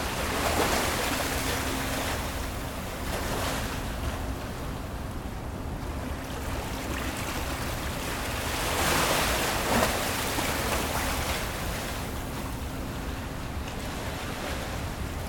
Jay St, Brooklyn, NY, USA - East River waves
The sound of waves, East River, Brooklyn.
3 June